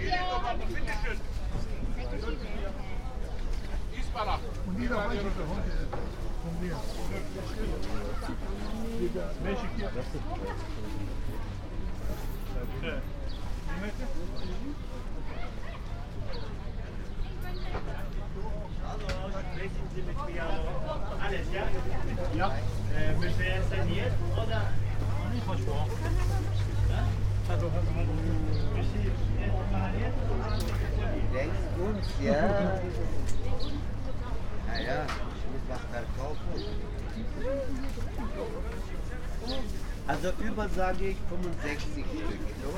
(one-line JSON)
{"title": "Maybachufer, weekly market - market walking", "date": "2019-05-07 15:10:00", "description": "Maybachufer market walk, spring Tuesday, sunny but nor warm, rather quiet market, i.e. not so much sellers shouting.\nfield radio - an ongoing experiment and exploration of affective geographies and new practices in sound art and radio.\n(Tascam iXJ2/iPhoneSE, Primo EM172)", "latitude": "52.49", "longitude": "13.42", "altitude": "38", "timezone": "Europe/Berlin"}